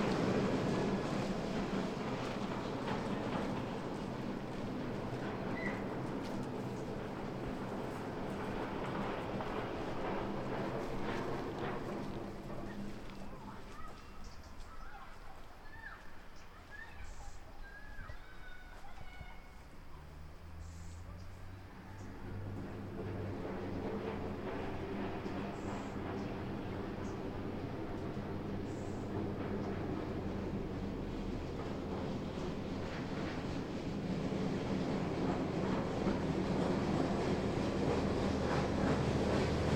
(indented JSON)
{
  "title": "Saint-Denis-lès-Martel, France - cars on woden bridge",
  "date": "2015-07-23 16:48:00",
  "description": "cars passing over the wooden bridge, children playing in the nearby river",
  "latitude": "44.93",
  "longitude": "1.67",
  "altitude": "114",
  "timezone": "GMT+1"
}